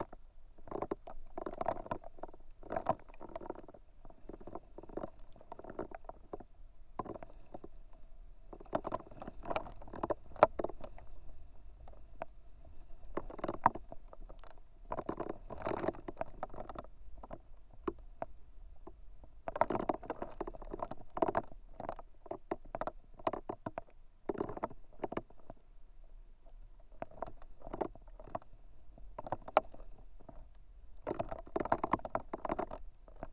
Vyžuonos, Lithuania, lonely reed
Vtzuonos botanical reserve. Lonely dried reed recorded with a pair of contact mics